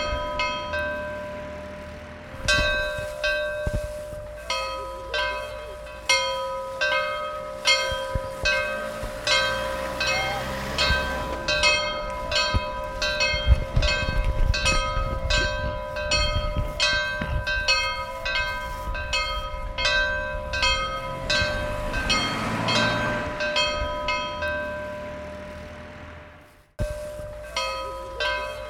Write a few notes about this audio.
A short recording of The Bells of St. Peters Church in Langwathby. Recorded using the internal microphones of the Zoom h1. These bells are rarely played. The sound of passing cars and people shuffling can also be heard.